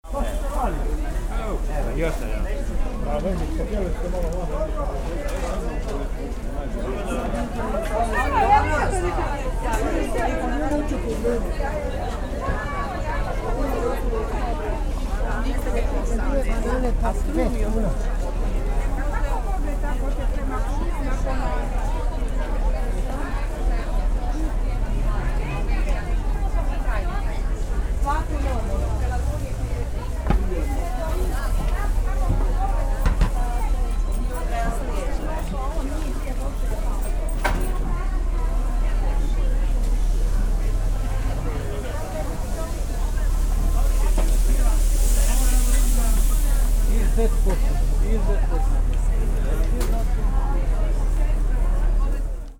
city marketplace.walking while recording
2 May 2008, 11:00